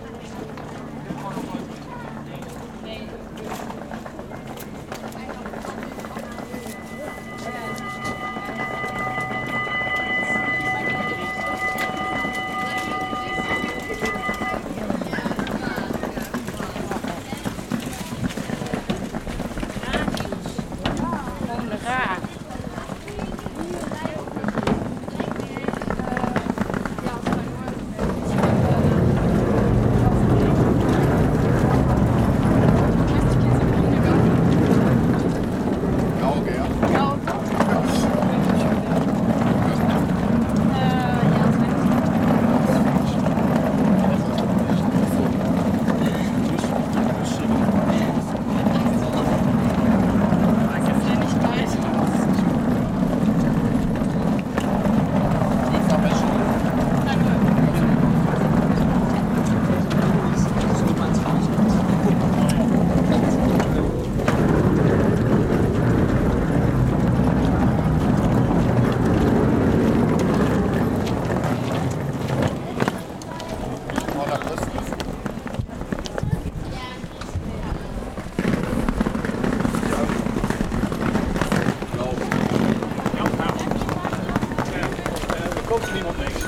Sint Servaasbrug, Maastricht, Niederlande - Bridge going up to let a ship pass through
A ship is passing on the Maas; the bridge goes up to let it through. Pedestrians can pass, while people with bikes have to wait.
Maastricht, Netherlands, September 2017